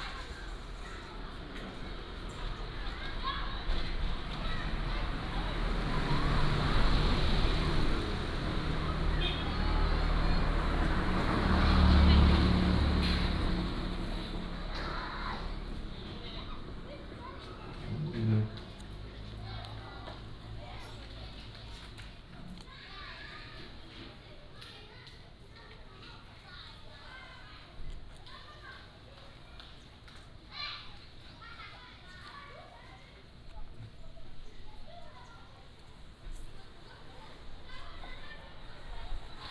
椰油村, Koto island - Small tribes
Small tribes, Traffic Sound, Yang calls
Taitung County, Taiwan, 28 October 2014